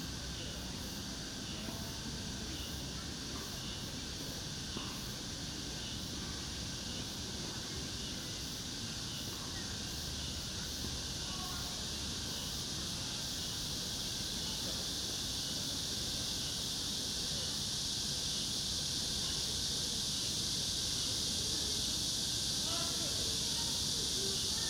대한민국 서울특별시 서초구 양재2동 235-1 - Yangjae Citizens Forest, Summer, Cicada
Yangjae Citizens Forest, Summer, Cicada
양재 시민의 숲, 매미